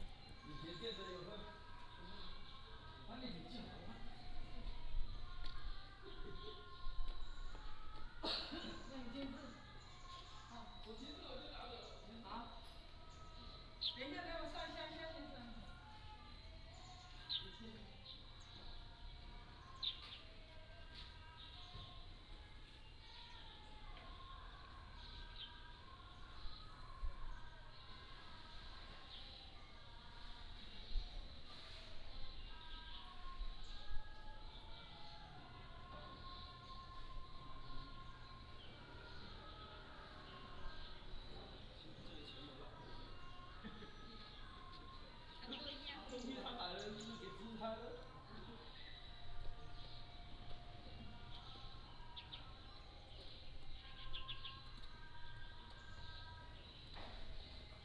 馬祖天后宮, Nangan Township - In the temple
Birds singing, In the temple